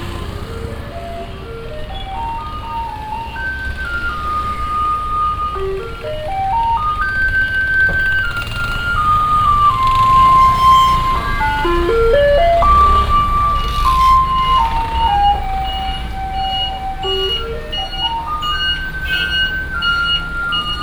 Daya Rd., Daya Dist., Taichung City - walking in the traditional market
traditional market, traffic sound, vendors peddling, Traditional market area, Binaural recordings, Sony PCM D100+ Soundman OKM II